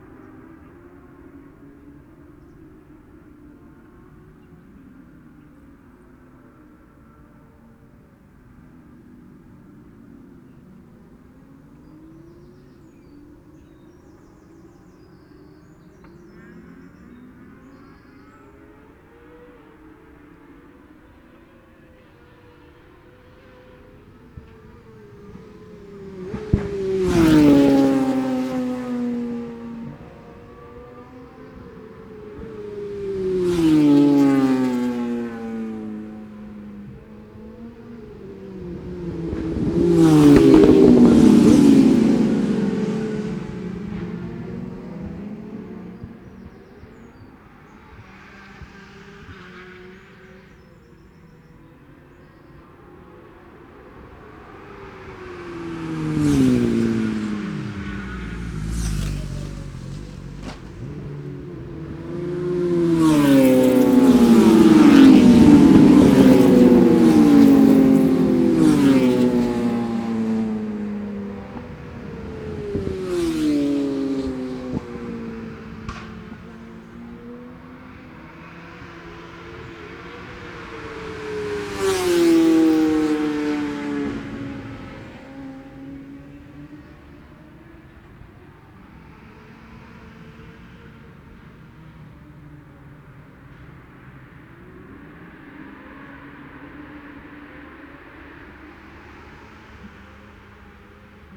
Cock o' the North Road Races ... Oliver's Mount ... Senior motorcycle practice ...
Scarborough, UK, 24 June 2017, ~10:00